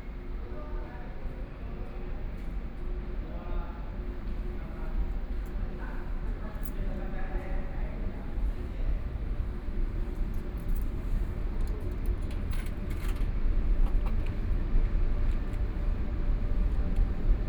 In the first floor lobby of the station, At the top of the track, After the train arrived at the station, Again, off-site Binaural recordings, Zoom H4n+ Soundman OKM II